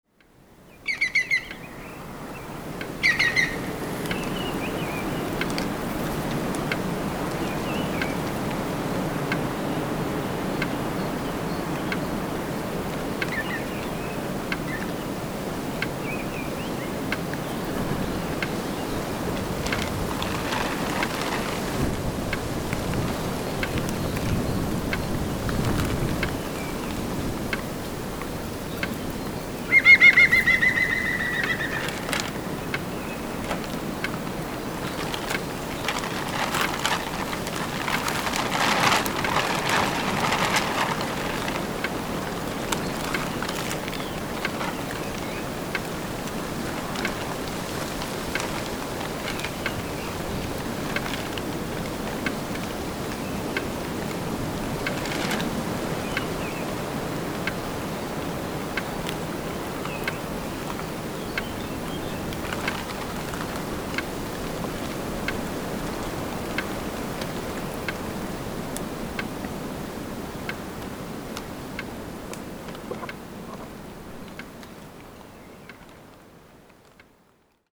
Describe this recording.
Wind in green houses and birds, Zoom H6